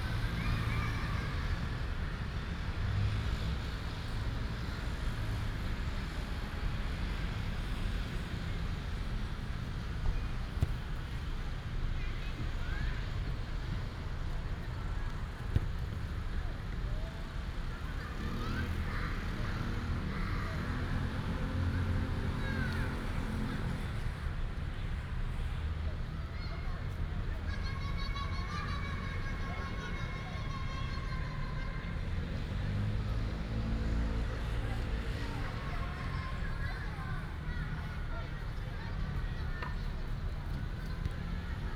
空軍十五村, North Dist., Hsinchu City - in the Park
in the Park, Many children are on football lessons, traffic sound, bird, Binaural recordings, Sony PCM D100+ Soundman OKM II